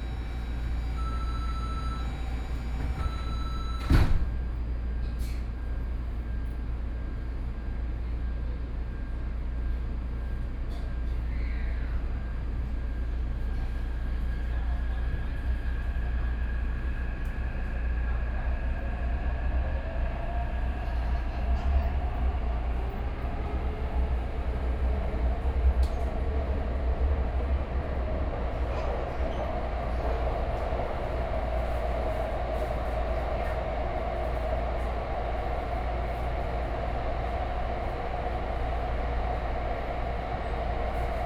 {"title": "Sanchong District, New Taipei City - Orange Line (Taipei Metro)", "date": "2013-08-16 12:24:00", "description": "from Daqiaotou station to Sanchong station, Sony PCM D50 + Soundman OKM II", "latitude": "25.06", "longitude": "121.49", "altitude": "16", "timezone": "Asia/Taipei"}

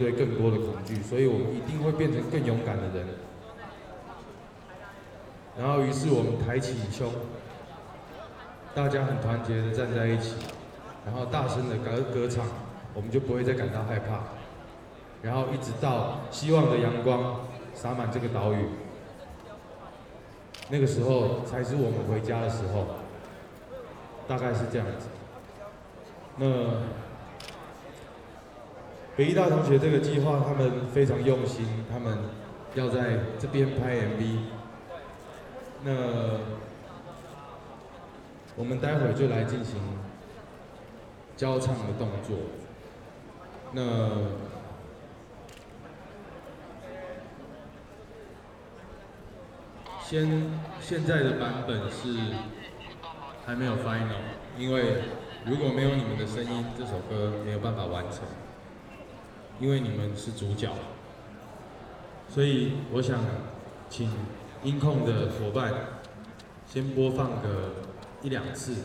{"title": "立法院, Taiwan - the student activism", "date": "2014-03-27 19:34:00", "description": "Student activism, Rock Band songs for the student activism, Students and the public to participate live recordings, People and students occupied the Legislative Yuan\nZoom H6+ Rode NT4", "latitude": "25.04", "longitude": "121.52", "altitude": "11", "timezone": "Asia/Taipei"}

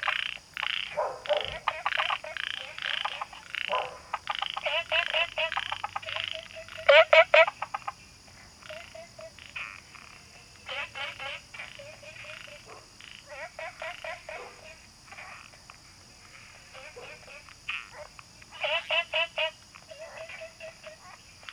{"title": "青蛙ㄚ 婆的家, Taomi Ln., Puli Township - Frogs chirping", "date": "2015-08-11 21:06:00", "description": "Frogs chirping, Small ecological pool\nZoom H2n MS+XY", "latitude": "23.94", "longitude": "120.94", "altitude": "463", "timezone": "Asia/Taipei"}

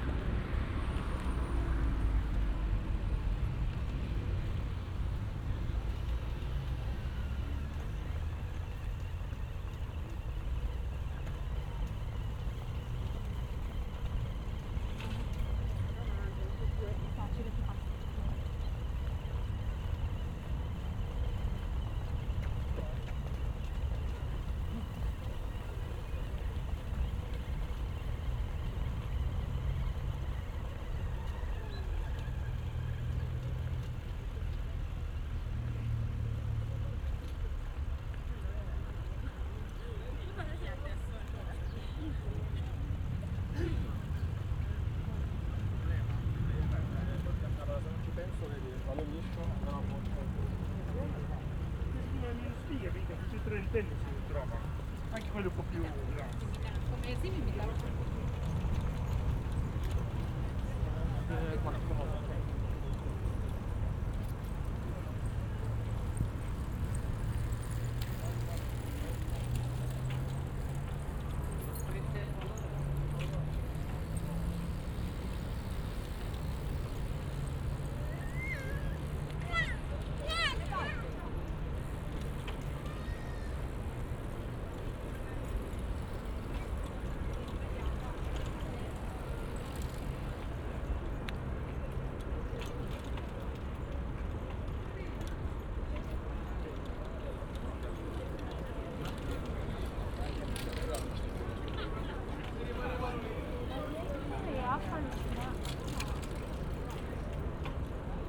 {"title": "Ascolto il tuo cuore, città. I listen to your heart, city. Chapter XCV - Soundbike to go and walking back in the time of COVID19 Soundbike", "date": "2020-06-02 18:07:00", "description": "Chapter XCV of Ascolto il tuo cuore, città. I listen to your heart, city\nTuesday, June 2nd 2020. Cycling on the embankment of the Po, at the Murazzi and back walking due to a break in the bike, eighty-four days after (but day thirty of Phase II and day seventeen of Phase IIB and day eleven of Phase IIC) of emergency disposition due to the epidemic of COVID19.\nStart at 6:07 p.m. end at 6:59 p.m. duration of recording 52’00”\nThe entire path is associated with a synchronized GPS track recorded in the (kmz, kml, gpx) files downloadable here:", "latitude": "45.06", "longitude": "7.70", "altitude": "227", "timezone": "Europe/Rome"}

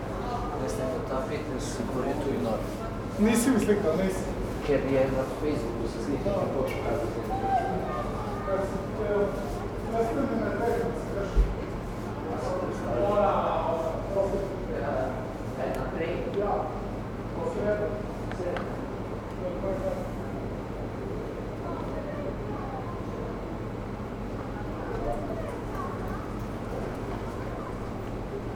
{"title": "Čevljarska ulica, Koper - cafe outside, narrow street", "date": "2015-04-06 16:10:00", "description": "street ambience, stony paths, afternoon, cold day, first words into red notebook in Koper", "latitude": "45.55", "longitude": "13.73", "altitude": "16", "timezone": "Europe/Ljubljana"}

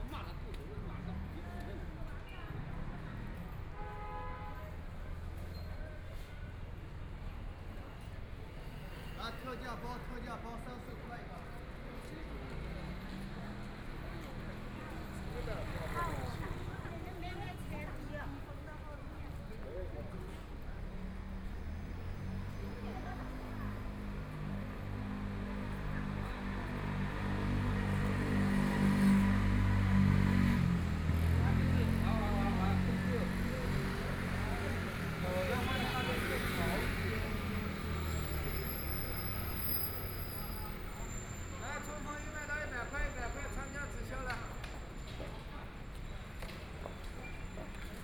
{"title": "South Sichuan Road, Shanghai - Soundwalk", "date": "2013-11-25 15:17:00", "description": "walking in the Street, Walking through the bazaar, The crowd, Bicycle brake sound, Traffic Sound, Binaural recording, Zoom H6+ Soundman OKM II", "latitude": "31.23", "longitude": "121.49", "altitude": "6", "timezone": "Asia/Shanghai"}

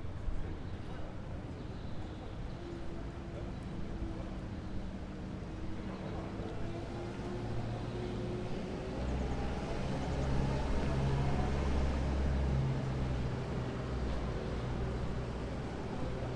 {"title": "Escher Wyss, Zürich, Sound and the City - Sound and the City #05", "date": "2012-07-17 11:50:00", "description": "Ein öffentlicher Platz als Klangarena, der fernliegende Klänge mit den nahen verschmilzt. Der Turbinenplatz ist auf allen vier Seiten umgeben von Glas-, Stein- und Stahlfassaden moderner, vielgeschossiger Bauten. Um diese Zeit ist der Platz belebt, verschiedene Nutzungen sind hörbar: Langsamverkehr (Schritte, Fahhräder, Autos, Motorräder), Reinigungsarbeiten (Putzfahrzeug), Relax-Zone (Stimmen, Restaurantgeräusche), Baustelle (Quietschen von Baumaschinen). Der Wind streicht durch die noch jungen Birken, die verschiedenen Bodenoberflächen (Kies, Platten, Teer) sind hörbar und brechen die nahen Klänge zu einem Murmeln. Über die offenen Seitengassen wird der Klang von sehr weit hineingetragen und in der Arena versammelt: Verkehrsgeräusche, Baustellenlärm, etc.\nArt of the City: Vanessa Billy (Lifting the Earth, 2012)", "latitude": "47.39", "longitude": "8.52", "altitude": "404", "timezone": "Europe/Zurich"}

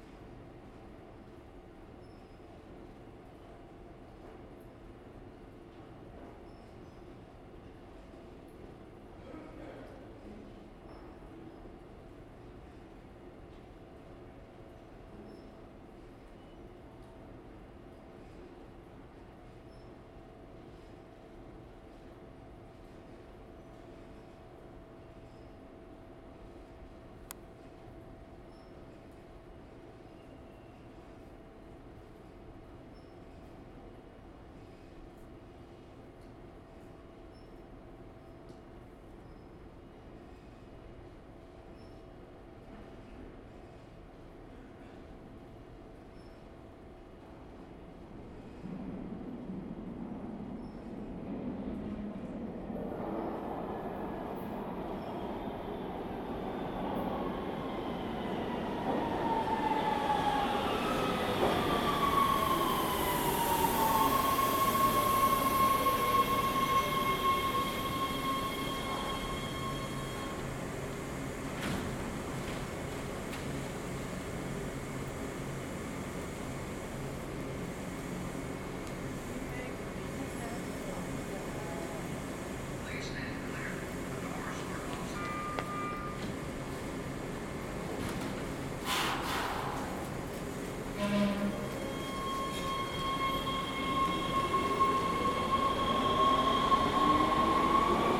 {"title": "East Hollywood, Los Angeles, Kalifornien, USA - LA - underground station, early afternoon", "date": "2014-01-24 14:30:00", "description": "LA - underground station vermont / santa monica, 2:30pm, distant voices, train arriving and leaving;", "latitude": "34.09", "longitude": "-118.29", "timezone": "America/Los_Angeles"}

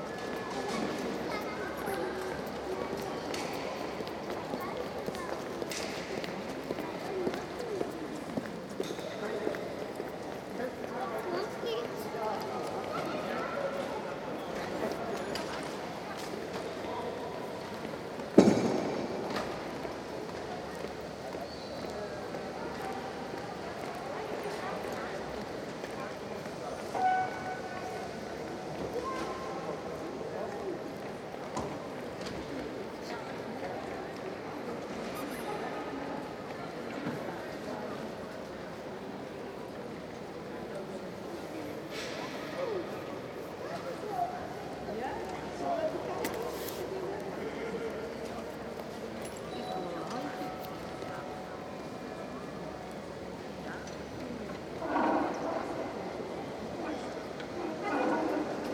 {"title": "Town Hall, The Hague, The Netherlands - Atrium", "date": "1998-03-10 12:56:00", "description": "Footsteps, voices echoing in the huge atrium of the Stadhuis.\nSony MS mic to DAT recorder.", "latitude": "52.08", "longitude": "4.32", "altitude": "7", "timezone": "Europe/Amsterdam"}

